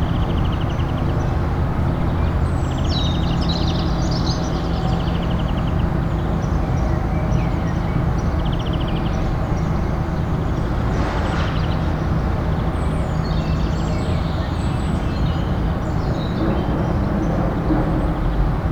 berlin: friedhof stubenrauchstraße - the city, the country & me: cemetery stubenrauchstraße
birds vs. excavators of a construction site close to the cemetery
the city, the country & me: april 10, 2013
Deutschland, European Union, 10 April 2013, 12:30